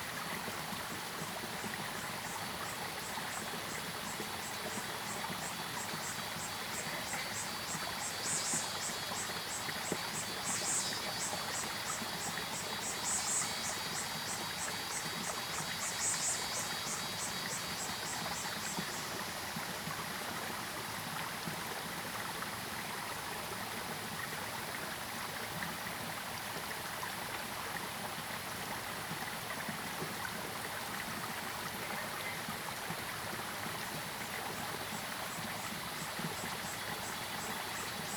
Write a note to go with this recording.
Cicadas called, Stream sound, Frogs called, The upper reaches of the river, Bird sounds, Zoom H2n MS+XY